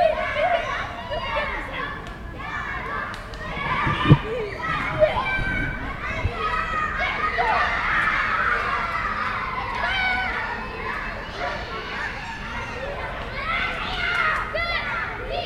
Rue Waldeck Rousseau, Chambéry, France - Cour d'école
Près de la cour de récréation de l'école primaire Waldeck Rousseau beaucoup de cris d'enfants comme toujours dans ces lieux.
France métropolitaine, France, 6 October, ~16:00